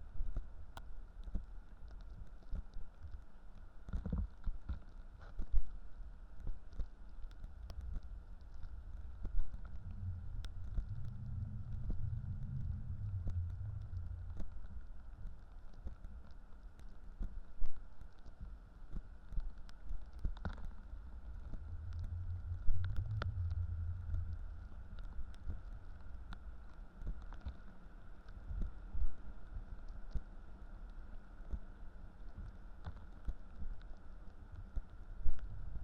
contact mics on a sheet of tiny ice left after flood on a frass
27 February 2019, ~16:00